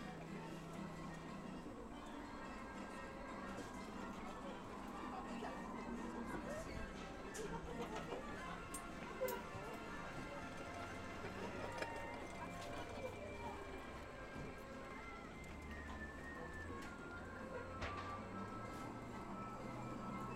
Bd du Dr Jules Pouget, Le Touquet-Paris-Plage, France - Le Touquet - manège
Le Touquet
Sur le front de mer
Ambiance du manège.